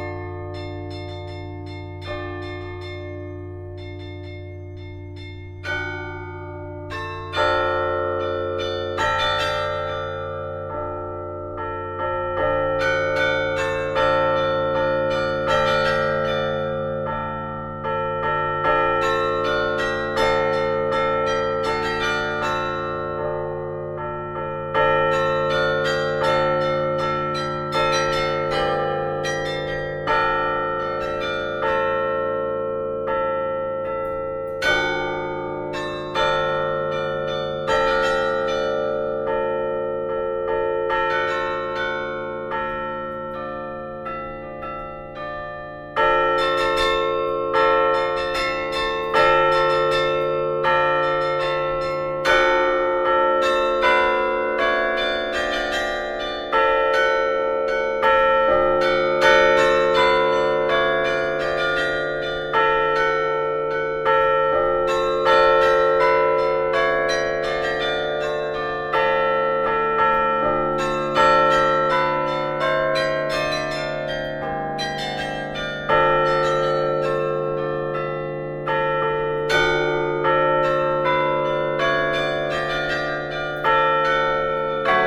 Tournai, Belgique - Tournai carillon
Pascaline Flamme playing at the Tournai carillon, in the belfry. It's a beautiful instrument.
Tournai, Belgium